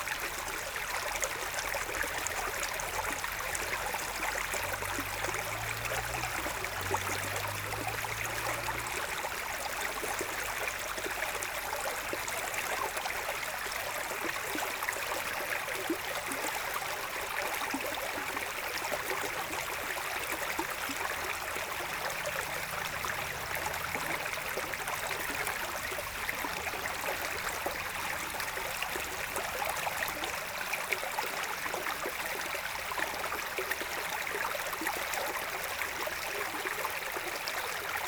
Chaumont-Gistoux, Belgique - The Train river
The train river, a small stream in the wood, recorded in a very bucolic landscape.